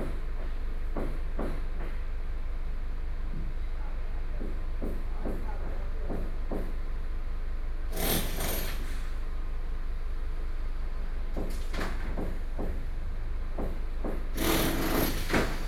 Beitou, Taipei - Being renovated house
Taipei City, Taiwan